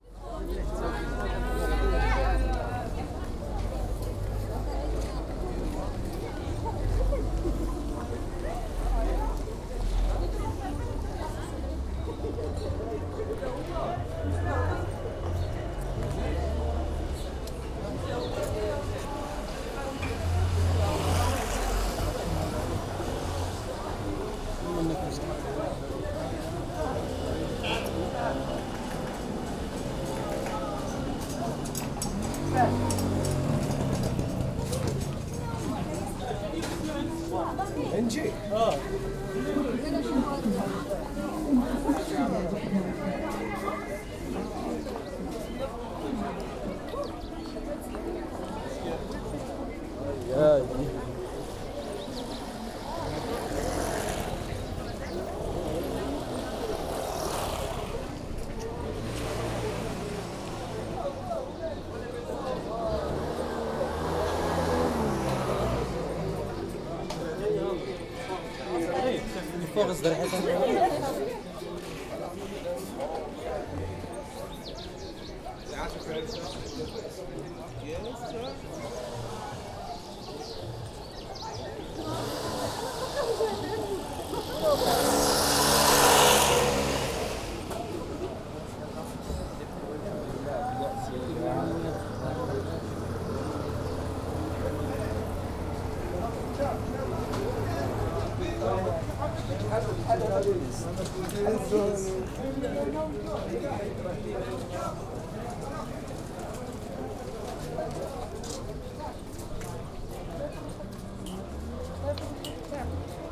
Rue Bab Doukkala, Marrakesch, Marokko - walk along street market
walk along the street market in Rue Bab Doukkala.
(Sony D50, DPA4060)
Marrakesh, Morocco, 25 February